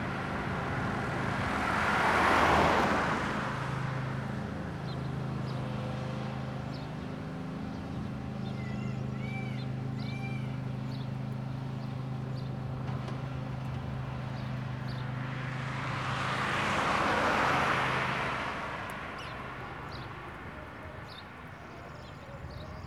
Morasko - lawn-mowing
a man mowing a lawn on a tractor. engine roar, clatter of stick and rocks hitting the blade. birds take over as soon as he leaves.
województwo wielkopolskie, Polska, European Union, 25 April 2013